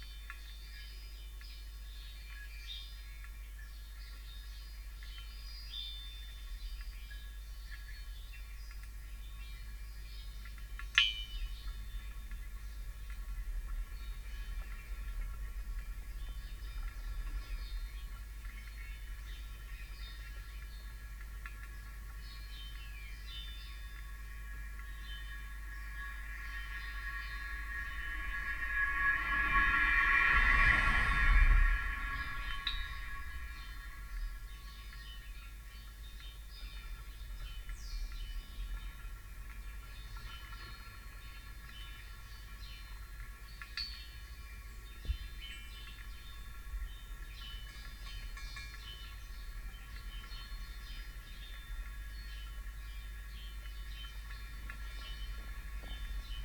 in the Forest Garden - rain collection tanks
2 x 1500litre rain water harvesting tanks, one hydrophone in each, house sparrows, vehicles drive past on the lane.
Stereo pair Jez Riley French hydrophones + SoundDevicesMixPre3